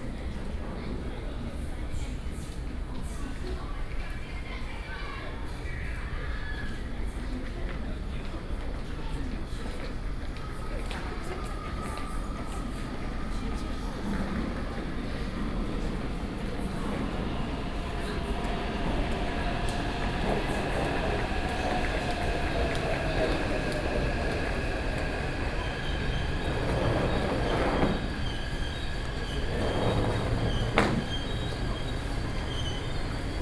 Taipei main Station, Taipei City - In the MRT station